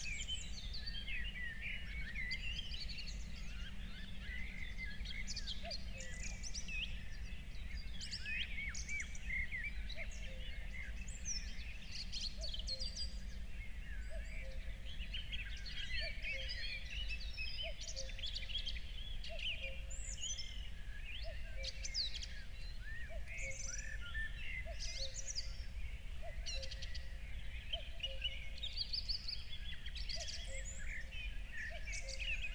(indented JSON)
{
  "title": "Berlin, Buch, Mittelbruch / Torfstich - wetland, nature reserve",
  "date": "2020-06-19 04:00:00",
  "description": "04:00 Berlin, Buch, Mittelbruch / Torfstich 1",
  "latitude": "52.65",
  "longitude": "13.50",
  "altitude": "55",
  "timezone": "Europe/Berlin"
}